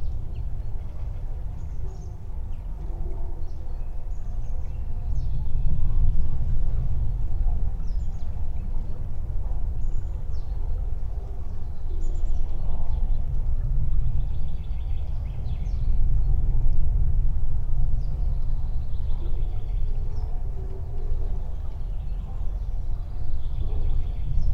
Ilciukai, Lithuania, the bridge
metallic/wooden bridge through river Sventoji. recorded with omni mics for soundcape and LOM geophone on bridge construction
Utenos apskritis, Lietuva, 5 April 2020, ~17:00